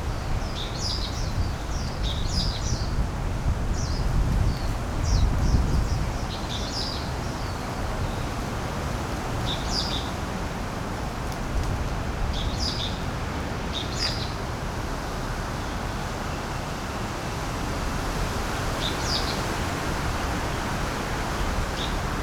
Zhongzheng Rd., 淡水區., New Taipei City - Windy
Windy, Birds singing, Traffic Sound
Sony PCM D50
Tamsui District, New Taipei City, Taiwan, 4 April 2012, 06:56